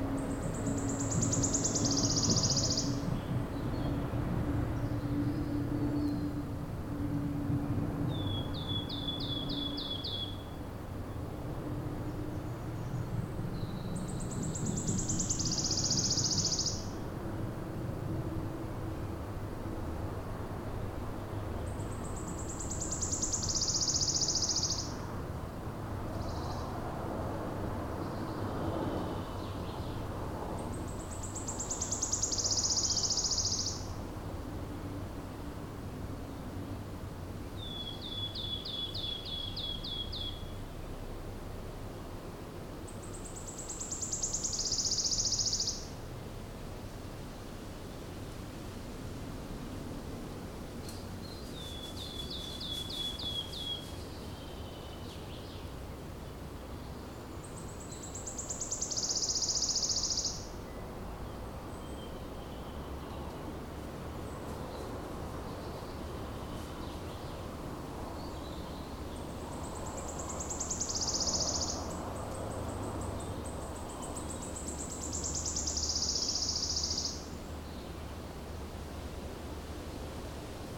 Le paysage sonore en sortant de la grotte de Banges, un pouillot siffleur en solo, grand corbeau, un peu de vent dans les feuillages et les bruits de la route des Bauges.
France métropolitaine, France, 9 June, 18:00